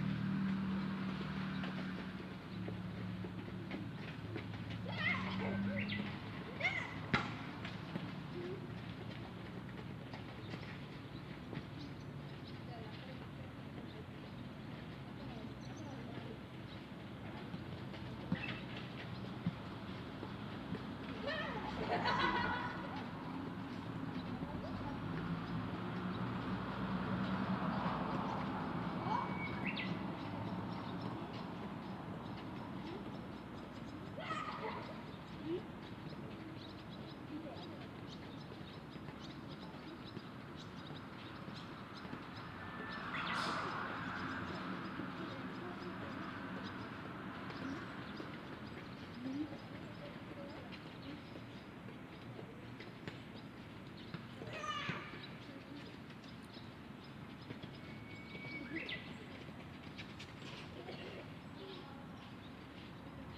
{
  "title": "Sakamoto, Otsu, Shiga, Japan - 202006151758 Tenmangu Public Park",
  "date": "2020-06-15 17:58:00",
  "description": "Title: 202006151758 Tenmangu Public Park\nDate: 202006151758\nRecorder: Sound Devices MixPre-6 mk1\nMicrophone: Luhd PM-01Binaural\nLocation: Sakamoto, Otsu, Shiga, Japan\nGPS: 35.080736, 135.872991\nContent: binaural soccer japan japanese boys sports children practice park cars",
  "latitude": "35.08",
  "longitude": "135.87",
  "altitude": "147",
  "timezone": "Asia/Tokyo"
}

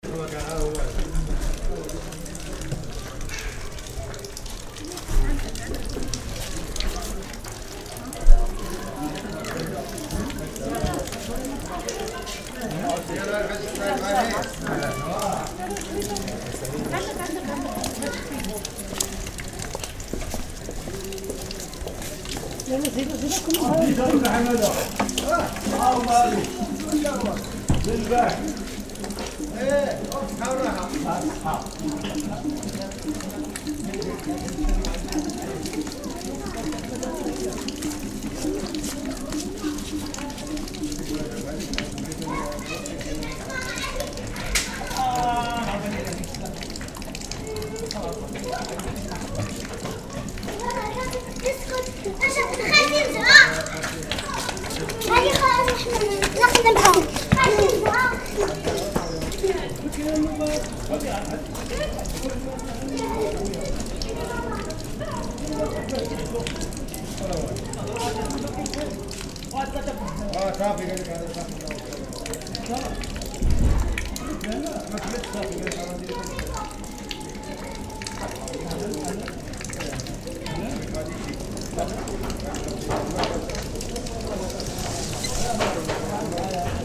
{
  "title": "Essaouira, Derb Agadir",
  "date": "2006-09-01 18:50:00",
  "description": "Africa, Morocco, Essaouira, street",
  "latitude": "31.51",
  "longitude": "-9.77",
  "altitude": "9",
  "timezone": "Africa/Casablanca"
}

